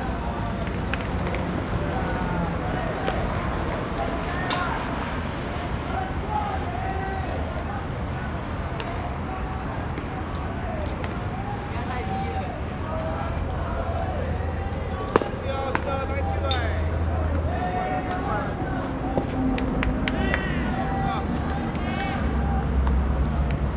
Softball Field, Traffic Sound
Zoom H4n +Rode NT4
New Taipei City, Banqiao District, 土城堤外機車專用道